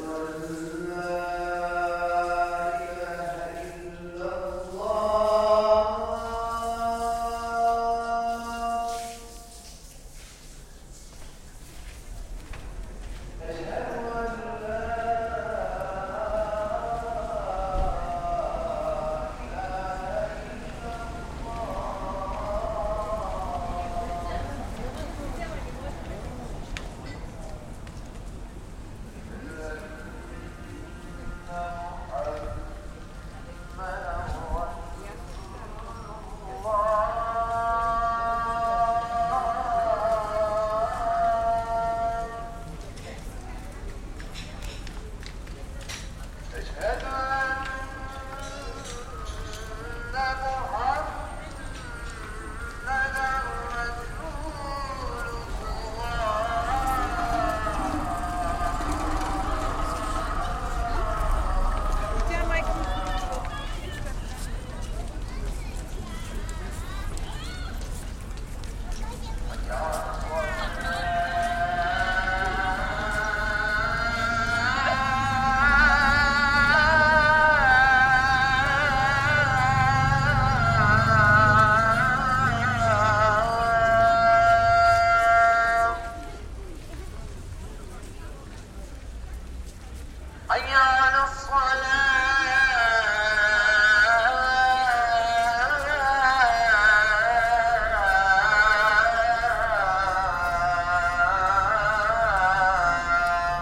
Call for prayer & charleston . Plovdiv
Recorded in motion from inside the mosque, getting out & walking around the central place. There was a band playing & they stopped, waiting the end of the call to restart. Contrast of ambiences & mutual respect...